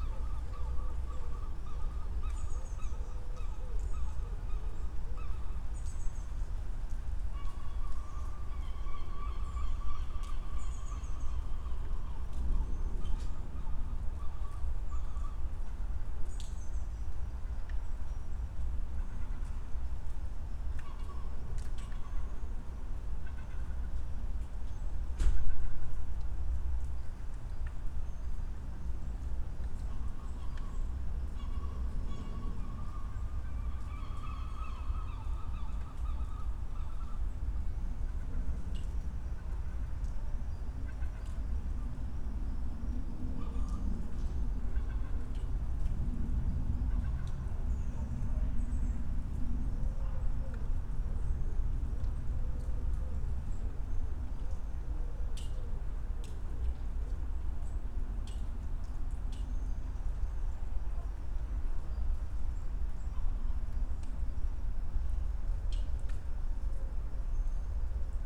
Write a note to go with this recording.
Trinity college, A lovely nice place, after the rain....and summer graduating. The tourists are gone, maybe a few students are still here. Recording devices : Sound device mix pre 6 + 2 primo EM172 AB 30 cm setup.